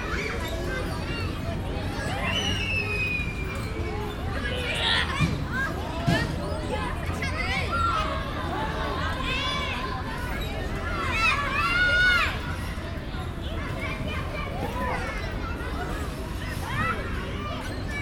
Praça da Jaqueira - Jaqueira, Recife - PE, Brasil - Playground